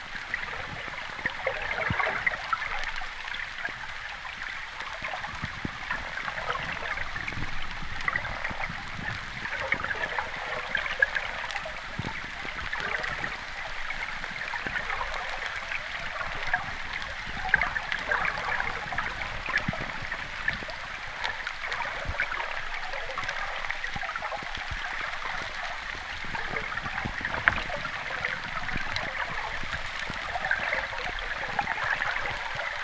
Ukmergė, Lithuania, river flow
Hydrophone recording of river Sventoji